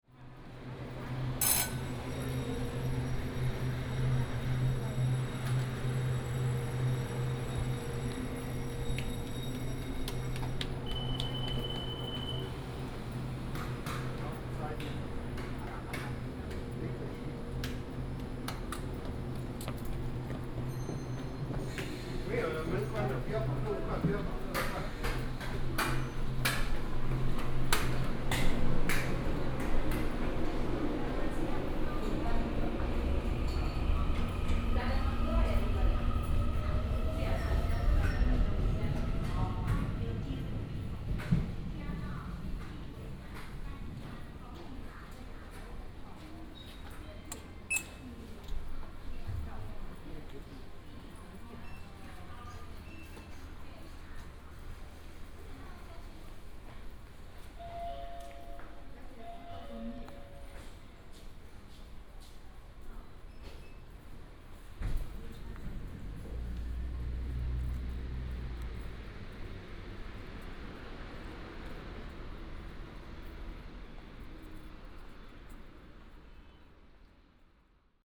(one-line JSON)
{"title": "Yangmei Station - walking in the station", "date": "2017-01-18 12:10:00", "description": "From the station platform, Through the hall, Go to the square outside the station", "latitude": "24.91", "longitude": "121.15", "altitude": "155", "timezone": "Asia/Taipei"}